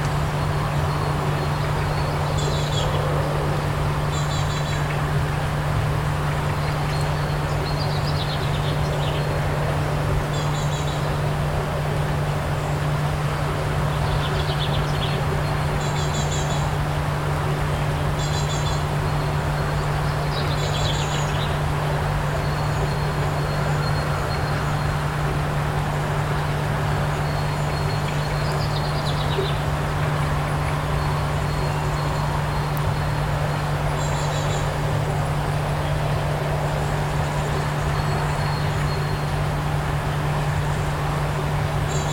Hurdcott, Winterbourne, UK - 030 Sewage Works drone